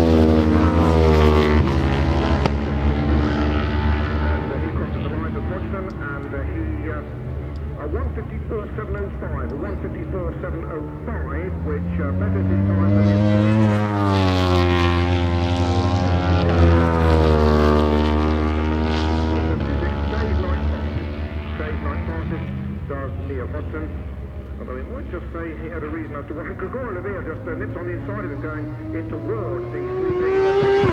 Silverstone Circuit, Towcester, United Kingdom - World Superbike 2003 ... Qualifying ...
World Superbike 2003 ... Qualifying ... part one ... one point stereo mic to minidisk ...